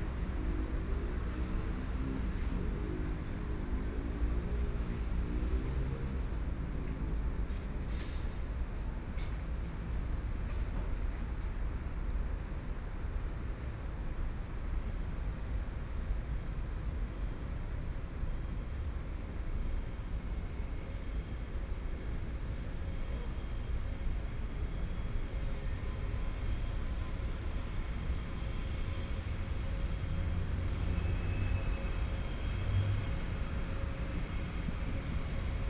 Hsinchu Railway Art Village - Train traveling through
Train traveling through, Sony PCM D50 + Soundman OKM II